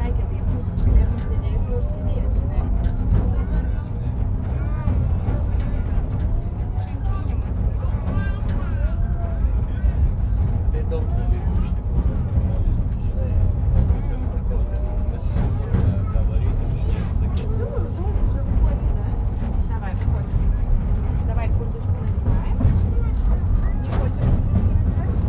Anykščiai, Lithuania, back to trainstation
tourist train returns to trainstation
31 August 2014, ~15:00